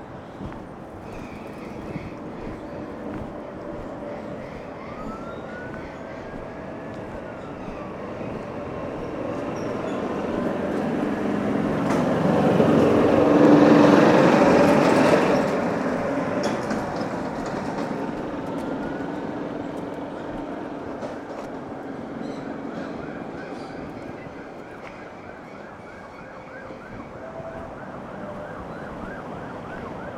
pine walk, Bournemouth, UK - pine walk in Bournemouth Park
20 September 2012, ~10:00